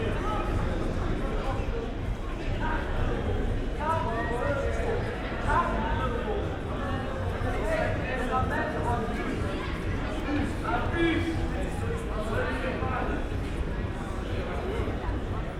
pedestrian area, Rue Philippe II, many teenagers are gathering here
(Olympus LS5, Primo EM172)
Luxemburg City, Luxembourg